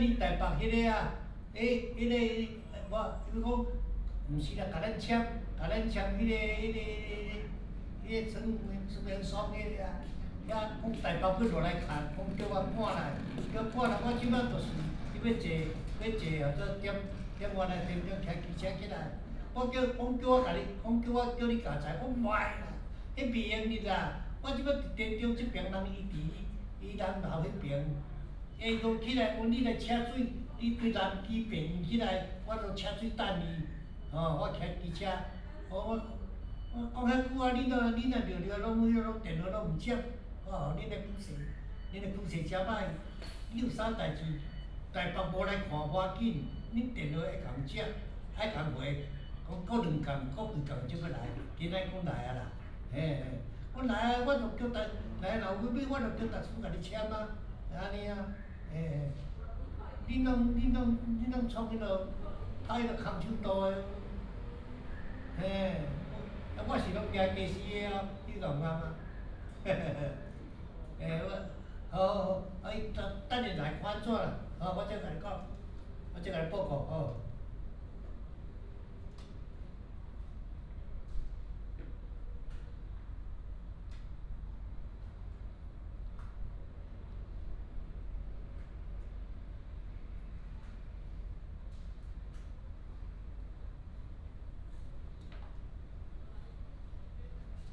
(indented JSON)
{
  "title": "Dadu Station, 台中市大肚區 - At the station platform",
  "date": "2017-02-27 13:06:00",
  "description": "At the station platform, The train passes by",
  "latitude": "24.15",
  "longitude": "120.54",
  "altitude": "12",
  "timezone": "Asia/Taipei"
}